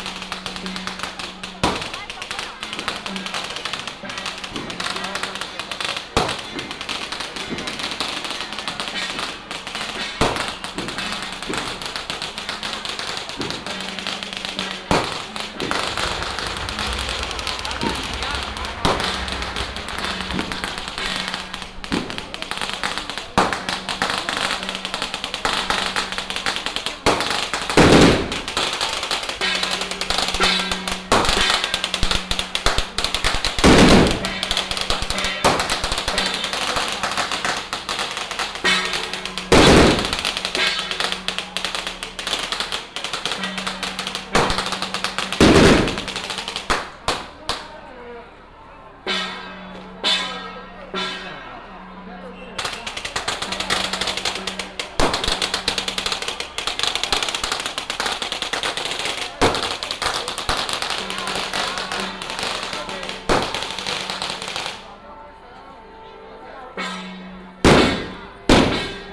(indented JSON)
{
  "title": "白沙屯, 苗栗縣通霄鎮 - Matsu Pilgrimage Procession",
  "date": "2017-03-09 12:41:00",
  "description": "Matsu Pilgrimage Procession, Crowded crowd, Fireworks and firecrackers sound",
  "latitude": "24.56",
  "longitude": "120.71",
  "altitude": "10",
  "timezone": "Asia/Taipei"
}